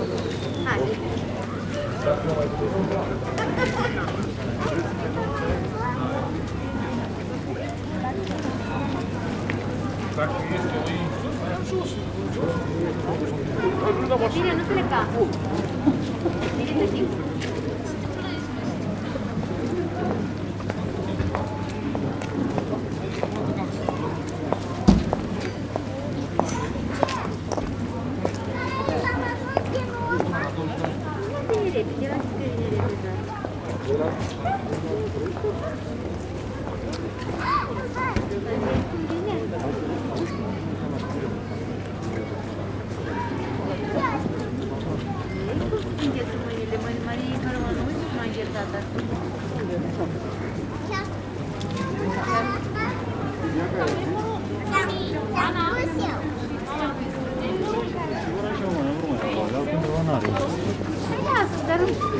Strada Michael Weiss, Brașov, Romania - 2020 Christmas in Brasov, Transylvania, Crowded Main Street
A crowded main street on Christmas. In the distance a church bell rings for the hour. Recorded with Superlux S502 Stereo ORTF mic and a Zoom F8 recorder.